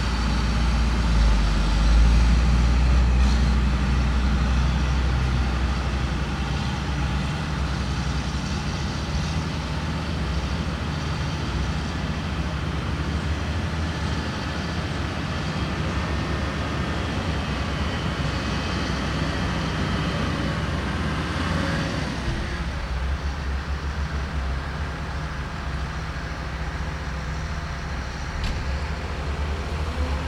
Montreal: St-Henri (snow removal) - St-Henri (snow removal)
equipment used: digital audio recorder PMD660, Shure SM58
Trucks removing snow